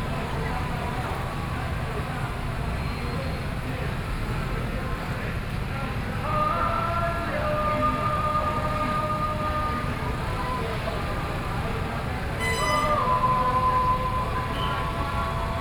Shenghou St., 宜蘭市東門里 - Festival
Road corner, Festival, Traffic Sound
Sony PCM D50+ Soundman OKM II
Yilan County, Taiwan, 26 July 2014